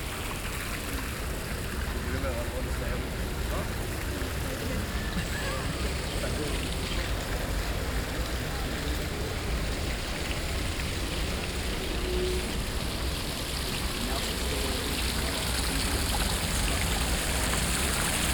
El Barri Gòtic, Barcelona, Barcelona, España - Fountain at Plaça de la Mercè
Water recording made during World Listening Day.
Barcelona, Spain, 18 July 2015